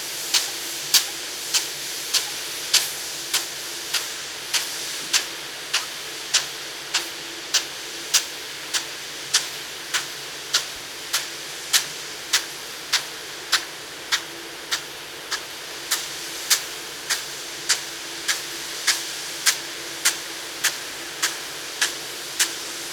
Luttons, UK - crop irrigation sprayer ...
Crop irrigation sprayer ... spraying a potato crop ... a weighted lever pushed out by the water swings back and 'kicks' the nozzle round a notch each time ... recorded using a parabolic reflector ...